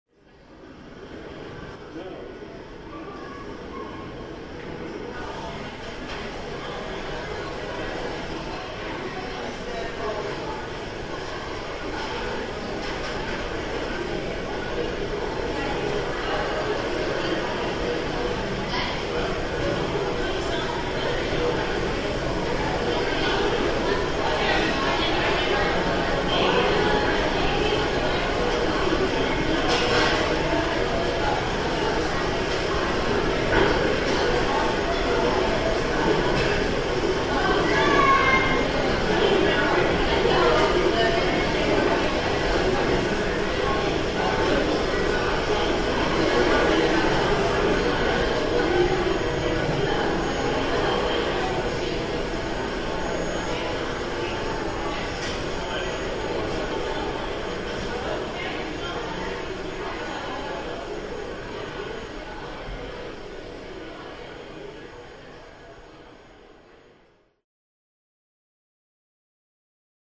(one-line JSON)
{"title": "Montreal: Fairview Shopping center - Fairview Shopping center", "description": "equipment used: PMD660 w/ two SM58s\nThere were a lot of people.", "latitude": "45.47", "longitude": "-73.83", "altitude": "45", "timezone": "America/Montreal"}